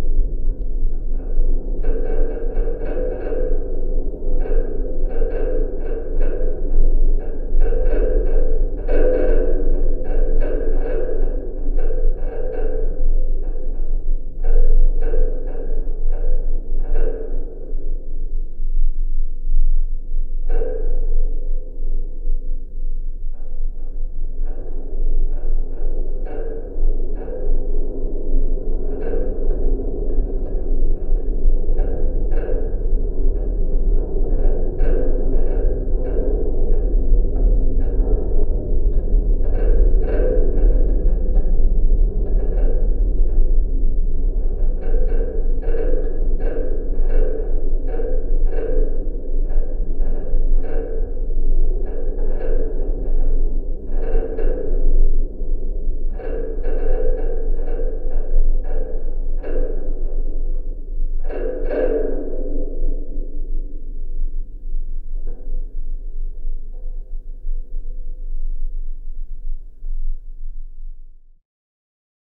Birštonas, Lithuania, abandoned structure
Half builded, abandoned metallic building. Contact microphones.